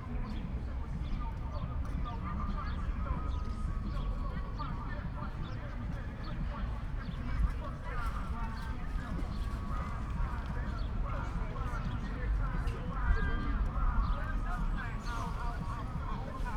Helsingforser Str., Berlin - square ambience
Berlin Friedrichshain, square ambience in the neighbourhood of an urban gardening project, warm and sunny late winter early afternoon
(Sony PCM D50, Primo EM172)
Berlin, Germany, 16 February 2019